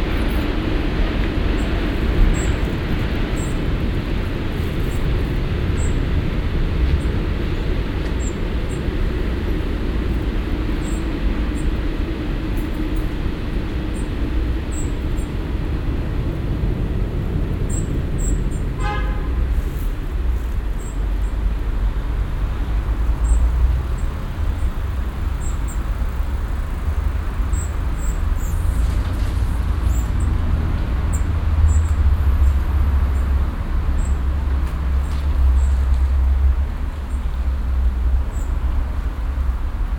stereofeldaufnahmen im juni 2008 mittags
vogel im gebüsch, parkatmo, fahrradfahrer, passierender zug und strassenverkehr venloerstr.
project: klang raum garten/ sound in public spaces - in & outdoor nearfield recordings
18 June